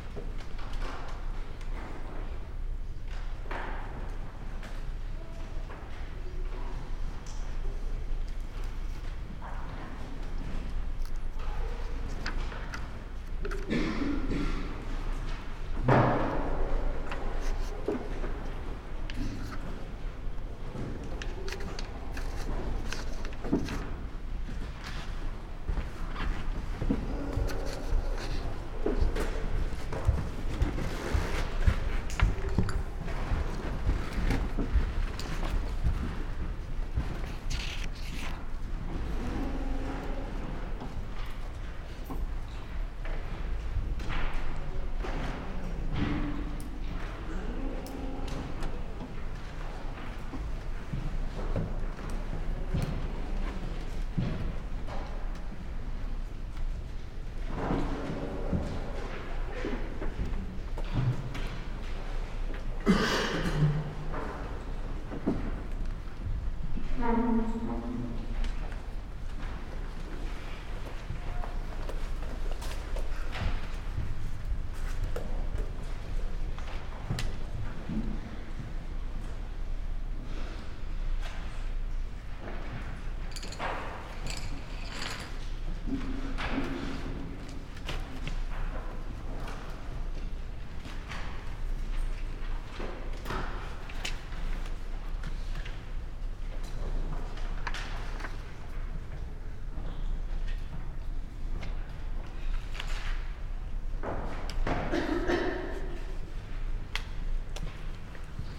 National and University Library, Ljubljana, Slovenia - reading room
sounds of ”silentio! spaces: wooden floor, chairs, desks, pencils, books, papers, steps, automatic door ...